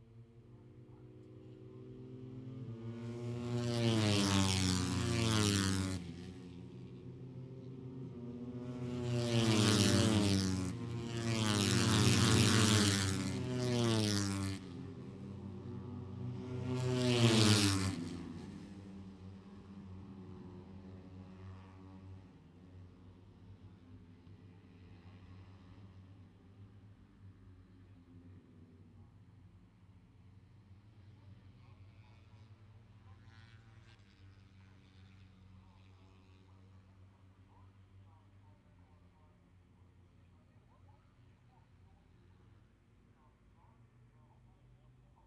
Silverstone Circuit, Towcester, UK - british motorcycle grand prix 2019 ... moto three ... fp2 ...
british motorcycle grand prix 2019 ... moto three ... free practice two ... maggotts ... lavalier mics clipped to bag ...